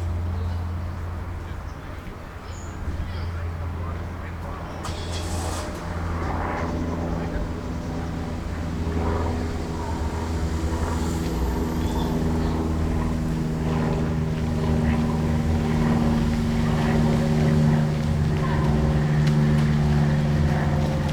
berlin wall of sound-outside zirkus kabuwazi. j.dickens 020909
Berlin, Germany